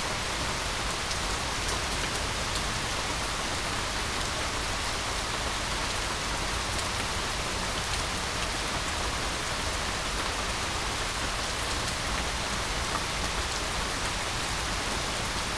Paulding Ave, Northvale, NJ, USA - Late Morning Rainstorm
A much-needed rainstorm, as captured from a covered bench right outside the front door. There was a severe drought at the time of this recording, with hot summer weather and almost no rain throughout the duration of my approximately 15-day stay.
[Tascam Dr-100mkiii uni mics]